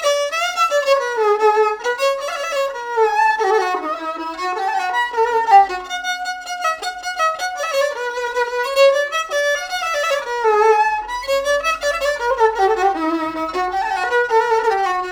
Via S. Martino, Massa MS, Italia - Il violino di Abdul
Abdul è arrivato a Borgo del Ponte da Casablanca, è un musicista e possiede un antico violino. L'ha fatto analizzare da un liutaio e dice che risale ai primi del '900.
Massa MS, Italy, August 2017